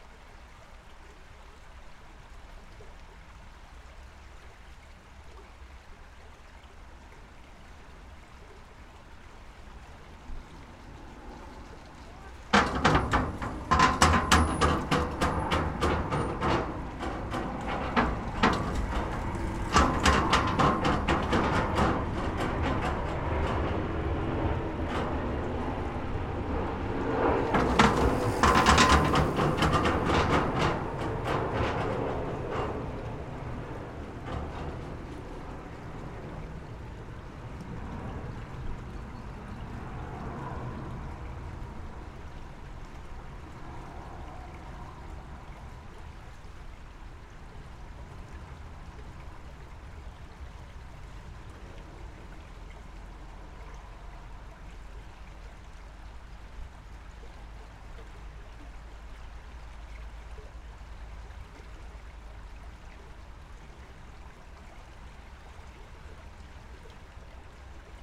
Vilnius, Lithuania, under Raiteliu bridge
microphones under pedestrians bridge over river Vilnia